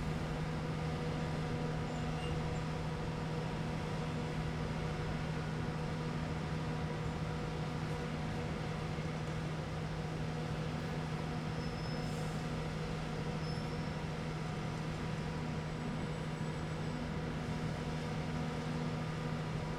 at the Freeport entrance, Birżebbuġa, Malta, cranes moving
(SD702 DPA4060)
Birżebbuġa, Malta, 3 April 2017, 12:10